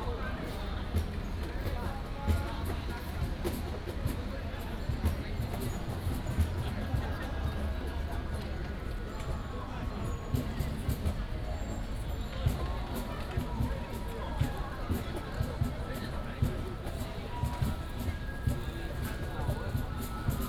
中正公園, Zhongli District - in the Park
Many high school students, High school student music association, birds
Taoyuan City, Taiwan, 7 February 2017